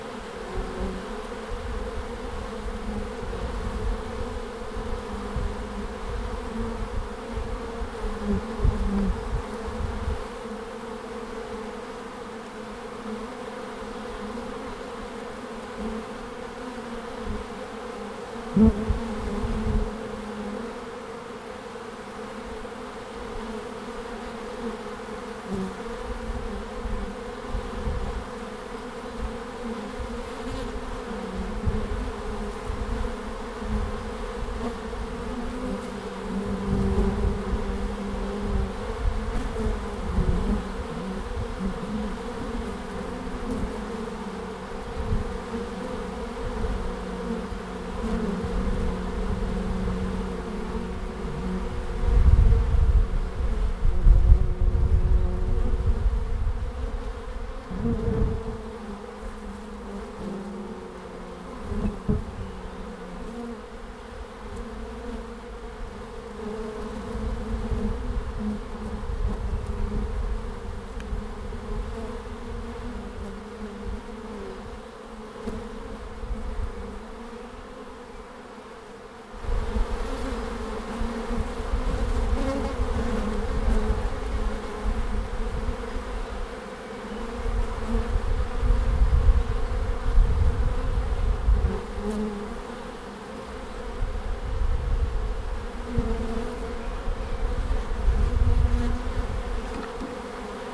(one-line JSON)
{"title": "Langel, Köln, Deutschland - Bienen Ende März / Bees at the end of march", "date": "2014-03-29 12:00:00", "description": "Noch eine Aufnahme der Bienen.\nOne more Recording of the bees.", "latitude": "50.84", "longitude": "7.00", "timezone": "Europe/Berlin"}